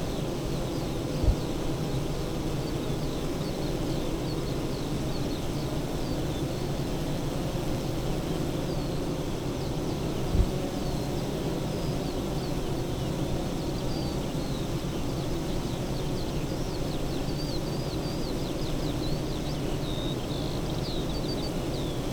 bee hives ... Zoom F6 to SASS ... eight hives in pairs ... SASS on floor in front of one pair ... bird song ... calls ... skylark ... yellow wagtail ..
26 June 2020, ~06:00